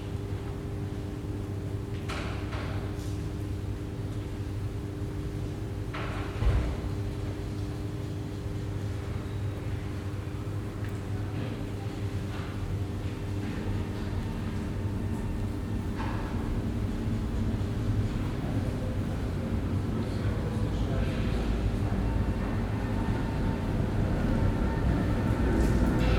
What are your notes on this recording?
early evening in a Prague passage way.